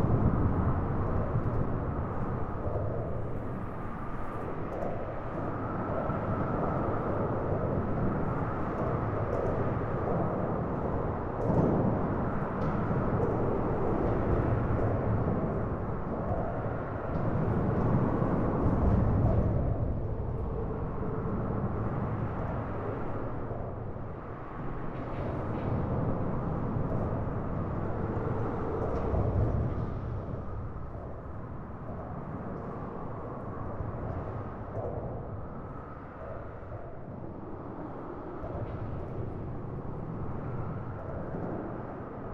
Los Duranes, Albuquerque, NM, USA - Gabaldon Underpass
Interstate 40 neighborhood freeway underpass. Recorded on Tascam DR-100MKII; Fade in/out 1 min Audacity, all other sound unedited.
9 August 2016